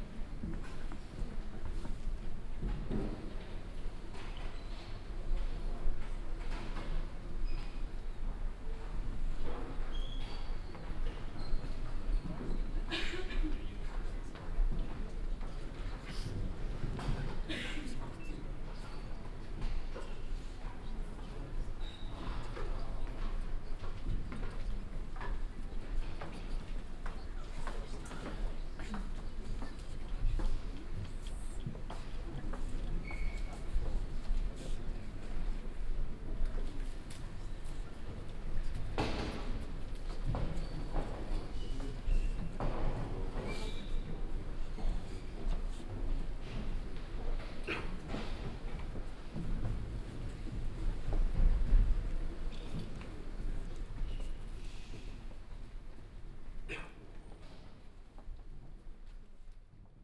amsterdam, paradiso, stairway
inside the concert club paradiso, walking up the wooden stairs to an upper floor performance at the performance night I like to watch too Julidans 2010
international city scapes - social ambiences and topographic field recordings